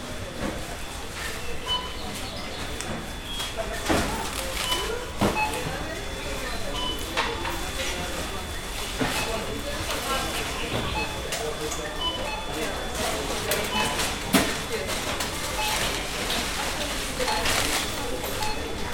MAXIMA, Gėlių g., Ringaudai, Lithuania - Maxima mall interior
Recording of "Maxima" mall interior. Busy day with a lot of people shopping. Recorded with ZOOM H5.